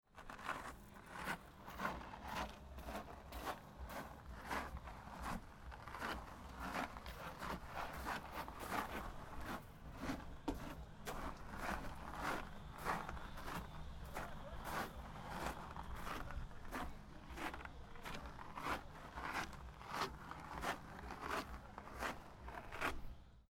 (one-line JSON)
{"title": "Croatia, Zrce, Kolan - sand on wood", "date": "2012-06-19 15:00:00", "latitude": "44.54", "longitude": "14.92", "altitude": "1", "timezone": "Europe/Zagreb"}